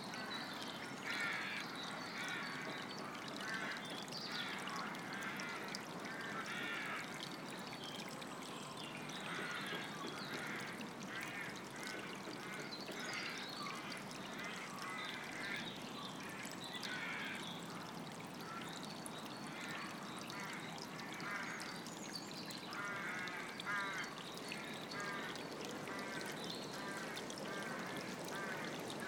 I got the ferry from Falmouth to St Maws in a stiff westerly wind, but on landing and only after a short walk I came across a lovely sheltered valley with a large Rookery in it. A small stream ran down the valley along side a small playground, and in the oak trees above were the young rooks in their nests. Sony M10 built-in mics.